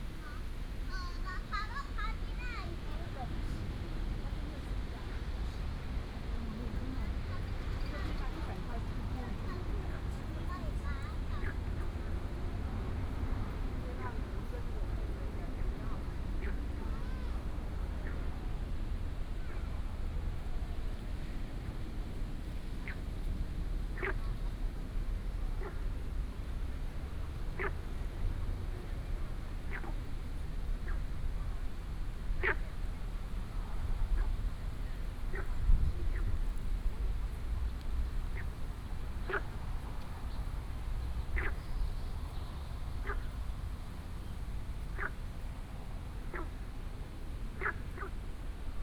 National Museum Of Natural Science, Taiwan - Botanical garden
walking in the Botanical garden, Traffic sound